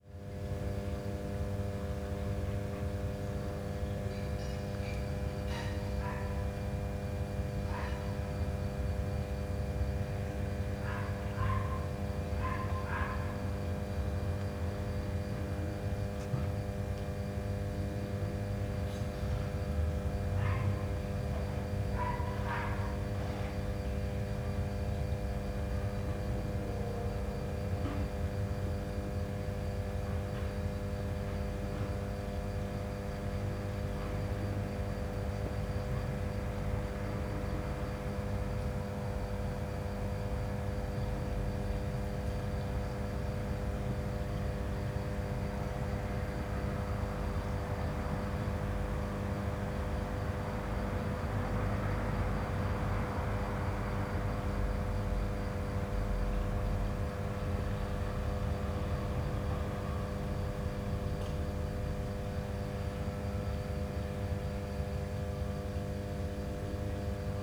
Schulstr., Niedertiefenbach, Deutschland - night, transformer startion
hum of a transformer station at night
(Sony PCM D50, Primo EM172)
3 July 2015, 23:45